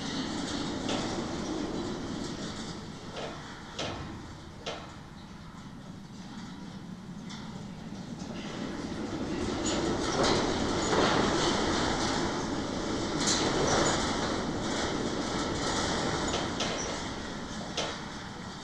Hermankova ulica, Maribor, Slovenia - fence with vines and wind 2
this stretch, connected perpendicularly to the previous, had no vines directly attached, allowing the wind sounds to be clearer, and the adding the 'reverb' of distance to the percussive sounds coming through from the other stretch. both recordings made with contact mics.